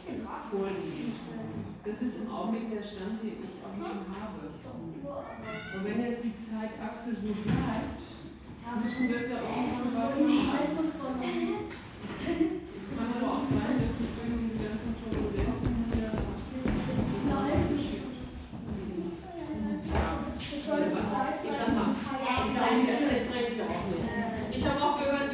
Infopoint, Tempelhofer Feld, Berlin - inside booth, talking
info stand, interesting mobile architecture made of wood and glass.
woman talks to a group of people about future and development of the Tempelhof area.
Berlin, Germany